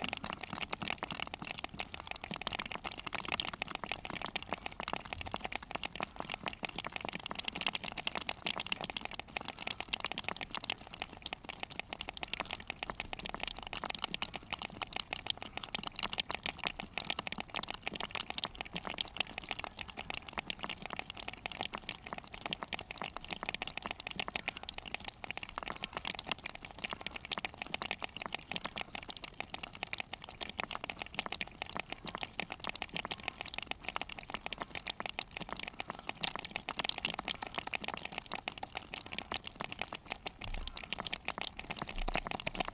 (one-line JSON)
{"title": "Rúa Cansadoura, Nigrán, Pontevedra, Spain - spider crabs", "date": "2015-12-04", "description": "Endemic spider crabs after local experienced fisherman caught them by hand and self made archaic tools.\n∞Thank you Û∞", "latitude": "42.15", "longitude": "-8.83", "altitude": "3", "timezone": "Europe/Madrid"}